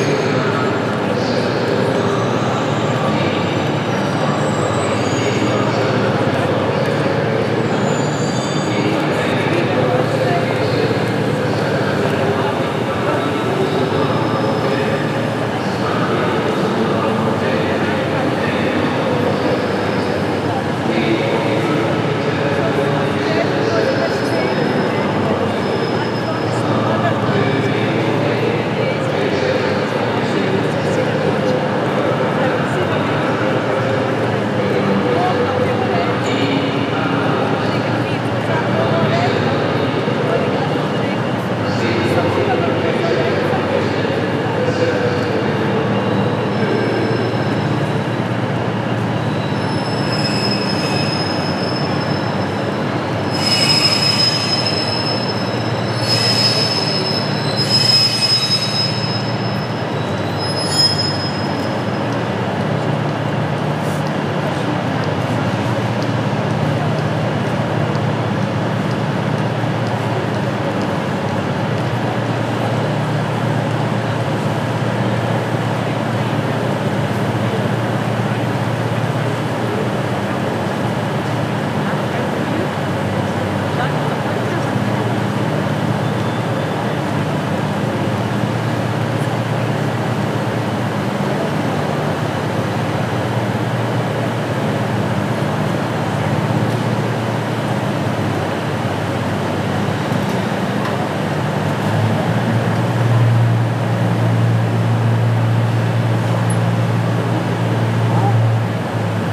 glasgow central station, glasgow
glasgow central station, rush hour, diesel train engine rumble
Glasgow, Glasgow City, UK, 15 July 2010